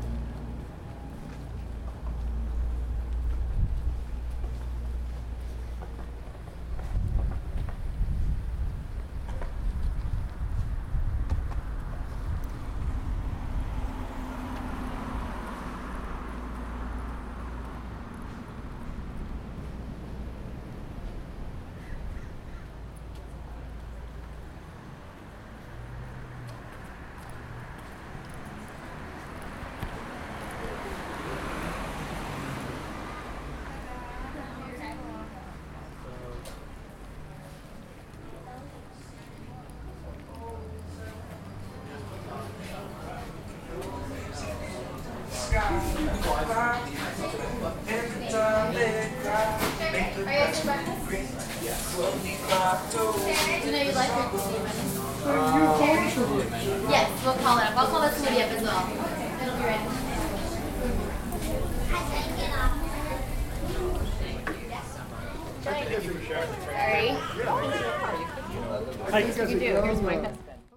Sunday morning walk north on Seacoast Drive, Imperial Beach, California. Ocean noises and people at outdoor tables, trucks and traffic noise, someone singing. Entering Katie's Cafe with people ordering breakfast, walking out onto patio, ocean noises, "Here's my husband."
Imperial Beach, CA, USA, 21 October